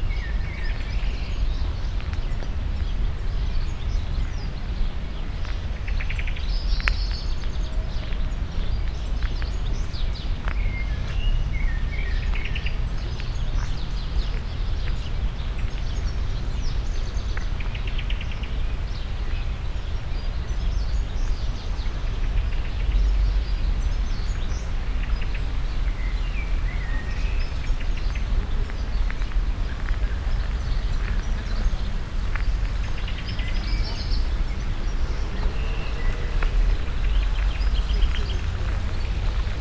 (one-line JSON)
{"title": "Sommerbad Kreuzberg, Berlin, Germany - walk", "date": "2013-05-16 19:50:00", "description": "slow walk on sandy pathway, bikers, joggers, walkers, talkers, blackbirds ...", "latitude": "52.50", "longitude": "13.40", "altitude": "36", "timezone": "Europe/Berlin"}